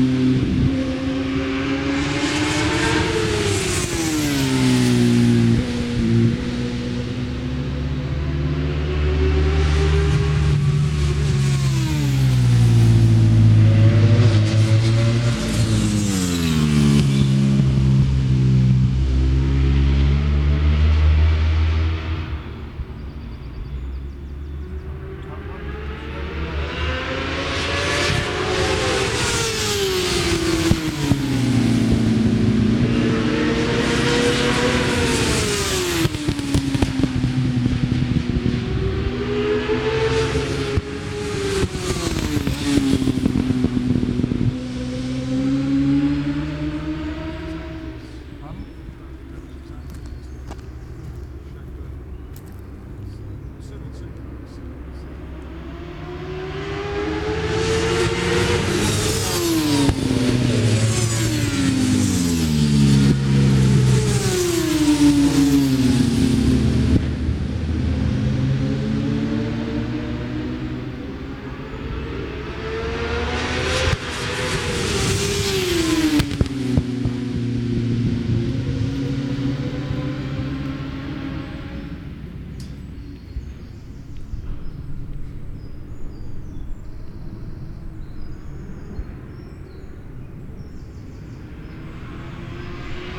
Brands Hatch GP Circuit, West Kingsdown, Longfield, UK - british superbikes 2003 ... superbikes ...
british superbikes 2003 ... superbikes free practice ... one point stereo mic to minidisk ...